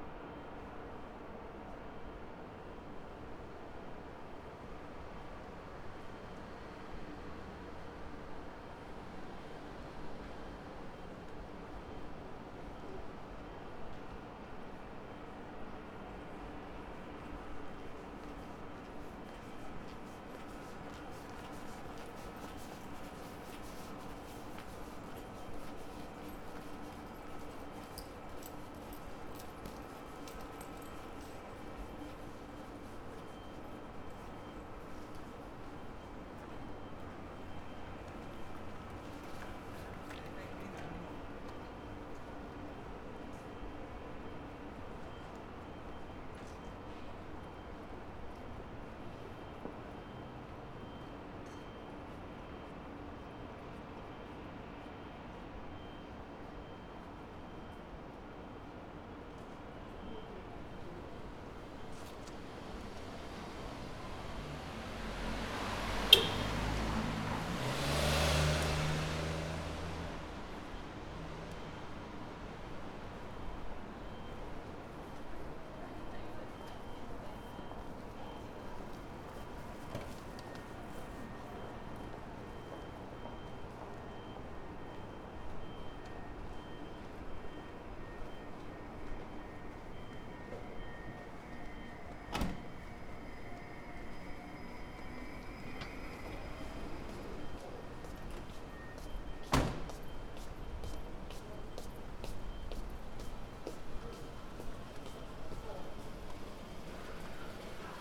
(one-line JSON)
{"title": "Osaka, Kita district - Sunday afternoon", "date": "2013-03-31 17:22:00", "description": "sparse sounds around Kita district. streets here are deserted at this time. businesses and restaurants are closed. sonic scape dominated by fans of air conditioning.", "latitude": "34.70", "longitude": "135.50", "altitude": "14", "timezone": "Asia/Tokyo"}